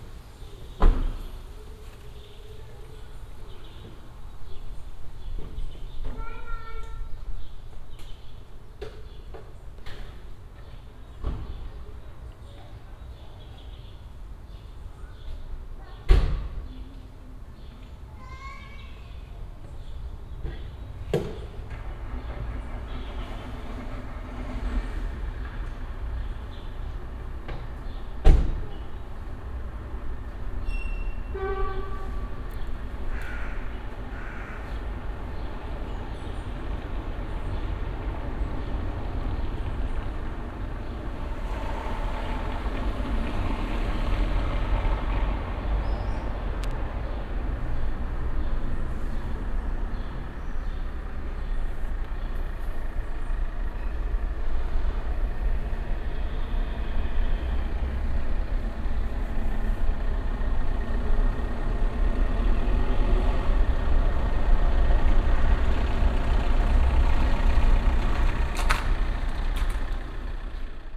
Berlin, Neukölln - balcony at Nogatstraße
(binaural) quiet Sunday morning at the balcony at Nogatstraße. an aimlessly wandering man explaining something to a family who are packing their car for vacation trip.